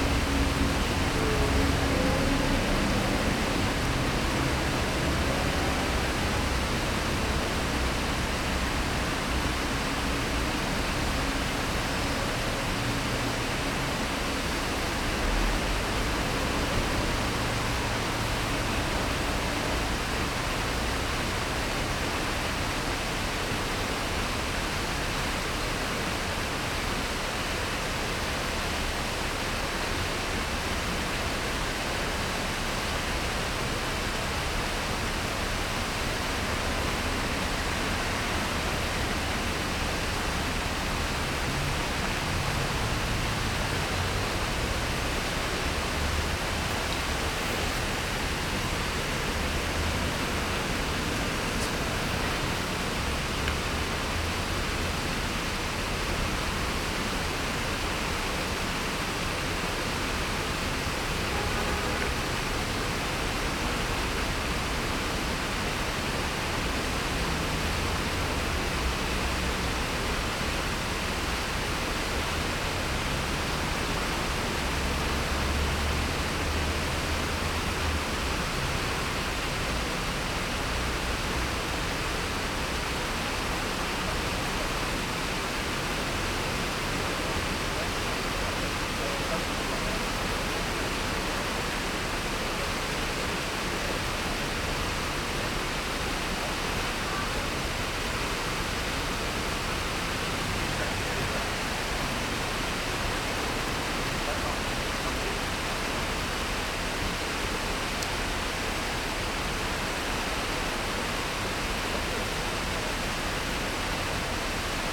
{
  "title": "Fontaine de la gare de Bourges",
  "date": "2010-09-17 12:13:00",
  "description": "Fontaine de la gare sncf de Bourges\ndesign : arbre métallique lumineux\nleau est aspirée dans un gouffre",
  "latitude": "47.09",
  "longitude": "2.39",
  "timezone": "Europe/Berlin"
}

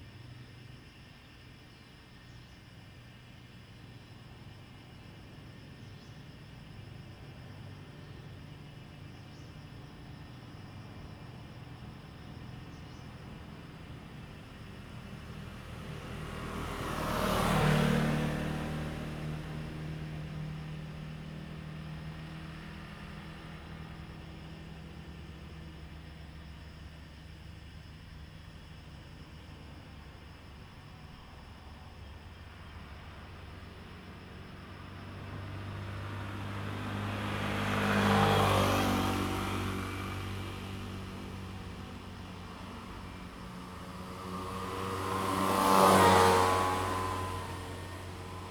{
  "title": "TaoMi Lane, Puli Township - Traffic Sound",
  "date": "2015-06-10 18:09:00",
  "description": "In the street, Traffic Sound, Cicadas sound\nZoom H2n MS+XY",
  "latitude": "23.94",
  "longitude": "120.93",
  "altitude": "474",
  "timezone": "Asia/Taipei"
}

